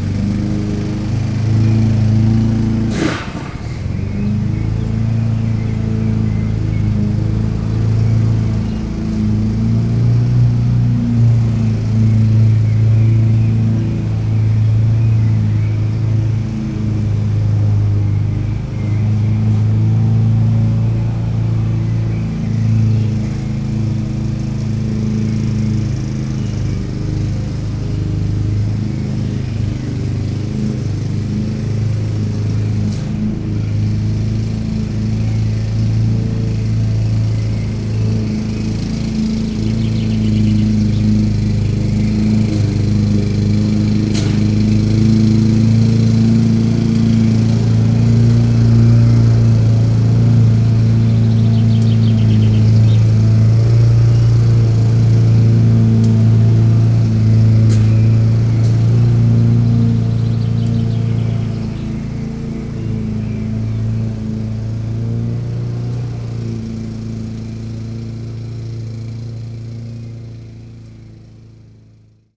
burg/wupper, schlossbergstraße: friedhof - the city, the country & me: cemetery
gardener cutting gras
the city, the country & me: may 6, 2011
Solingen, Germany, 6 May 2011, ~12:00